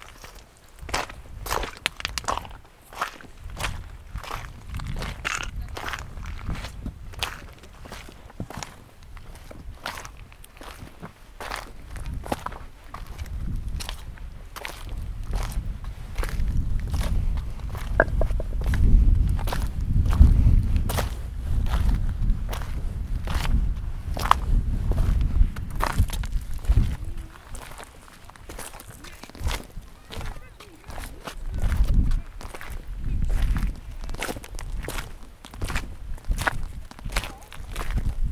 Åre, Sverige - Åreskutan top
Walking downhill on a stony trail. I think we´re on the north side of the mountain top. Going down. Windy. Walking with the clouds. Many people going up and down here, all the time. Even in winter, but then with skis. Good for the balance. Landscape is vast. Norway must be there in the far. A border somewhere. We´re going down. It was a nice tour. Now listening back to a part of that climbing down. I feel the texture of the trail, stones and a little bit muddy here and there. It is many different flowers up here. No fields of flower, but they find their spots. And lichens on the stones and moss in between them. Snow too, in the middle of summer. It´s warm when the wind is not blowing. We´re not far from the gondola lift now. We made it before they close.